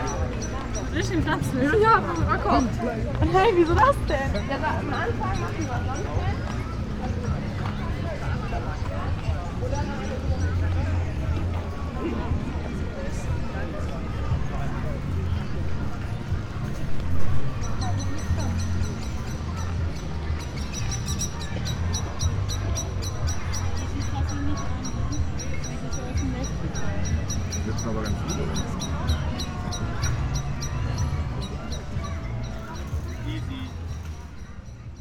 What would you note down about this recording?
people chatting, young blackbird, moped ...